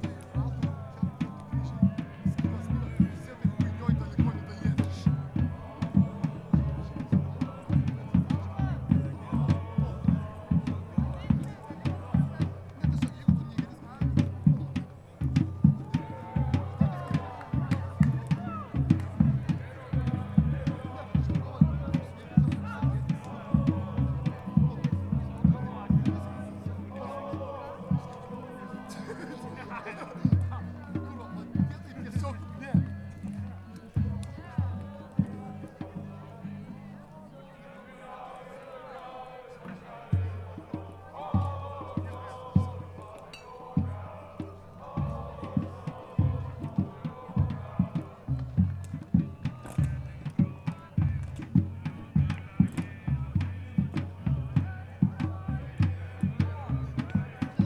Lithuania, Kulionys, eve of heathen festival Jore
some drumming, singing, speaking landscape from the eve of heathen festival Jore
24 April, 00:30